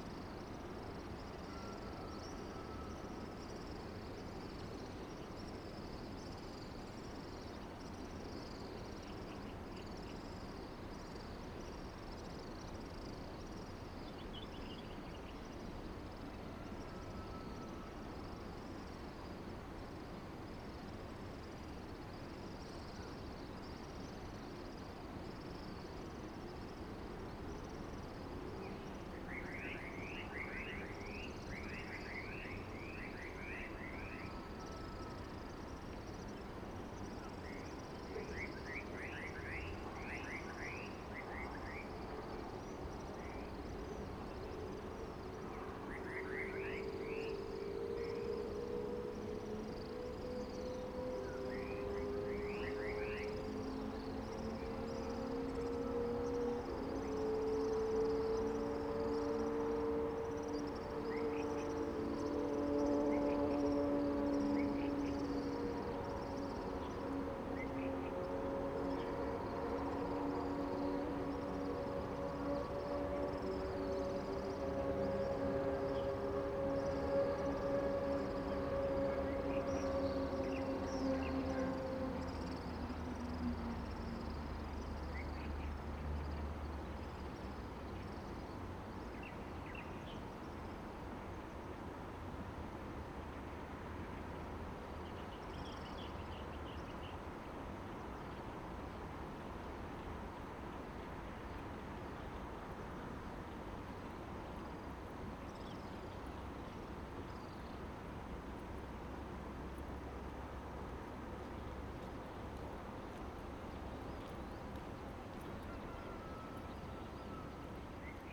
太麻里溪, 溪頭 太麻里鄉 - On the river bank
stream sound, On the river bank, The distant train travels through, Dog barking, Bird call
Zoom H2n MS+XY
Taimali Township, Taitung County, Taiwan